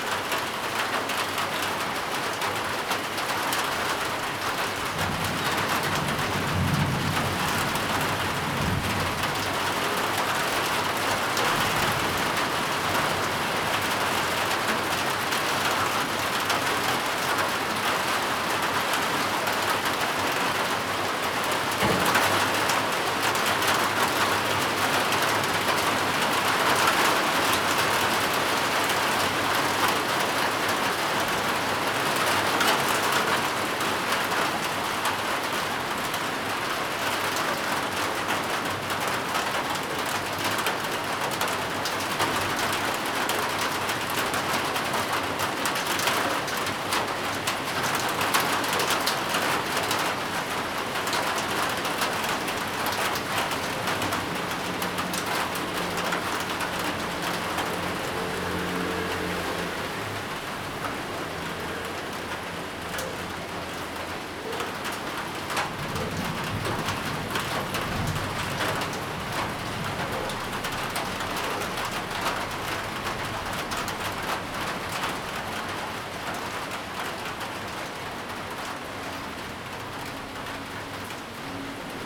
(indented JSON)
{
  "title": "大仁街, Tamsui District, New Taipei City - thunderstorm",
  "date": "2016-04-13 05:53:00",
  "description": "thunderstorm, Traffic Sound\nZoom H2n MS+XY",
  "latitude": "25.18",
  "longitude": "121.44",
  "altitude": "45",
  "timezone": "Asia/Taipei"
}